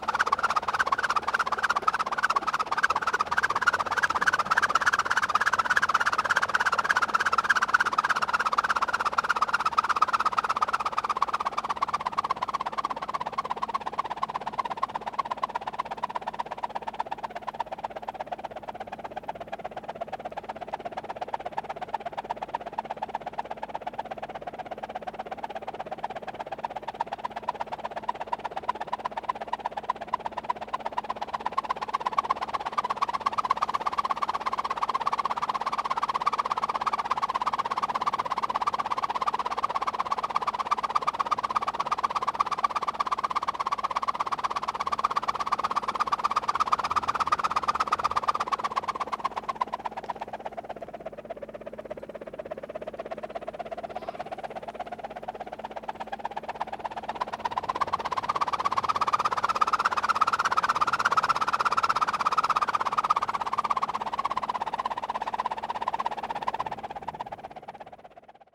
elastic wind wave. thanks Milos!